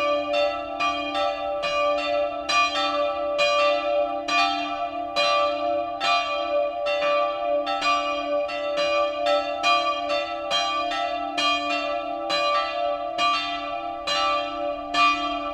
Altenberger Dom - church bells, evening service
churchbells of the Altenberger Dom callin g for evening church service